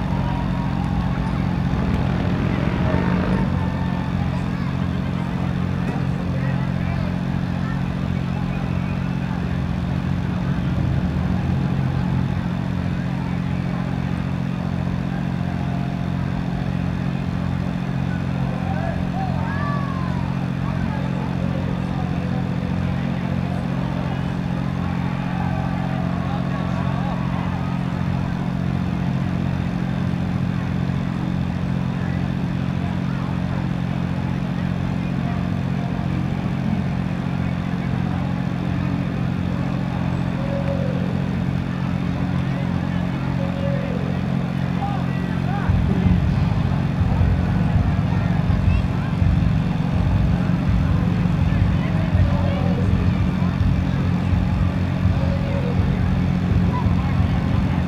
neoscenes: street party and generator